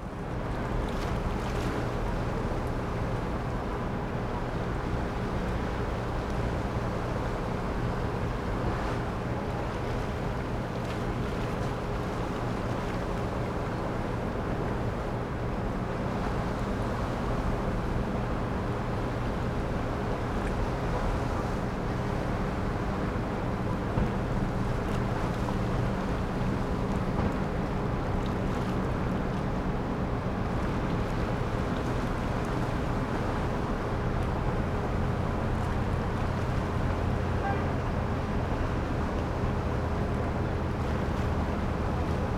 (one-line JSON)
{"title": "lipari castle - above harbour", "date": "2009-10-19 12:10:00", "description": "lipari harbour, 50m above, near old castle", "latitude": "38.47", "longitude": "14.96", "altitude": "6", "timezone": "Europe/Berlin"}